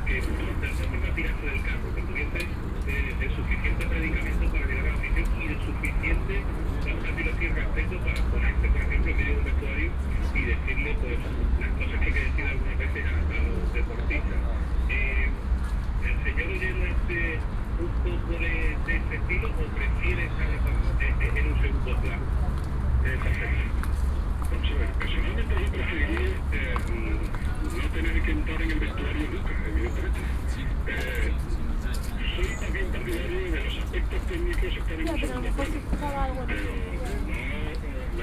Madrid, Calle Claudio Moyano - at second hand book stall
standing in front of a stall which was stacked with books and albums. somewhere between the books was a hidden radio or a small tv.
Madrid, Spain